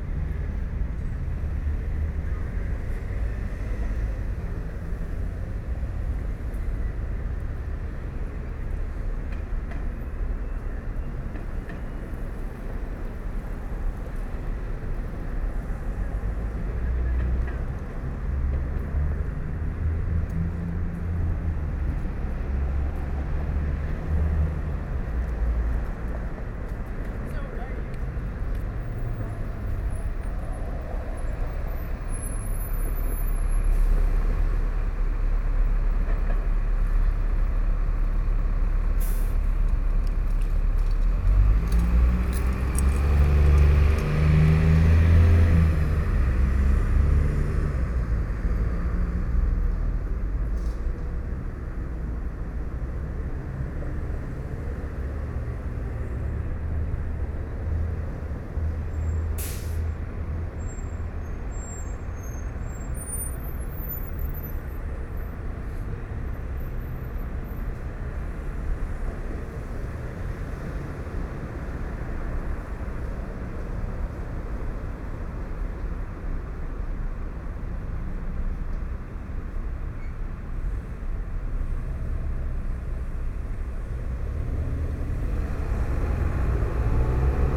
Montreal: Parc Lahaie - Parc Lahaie
equipment used: Edirol R-09HR
after a bike ride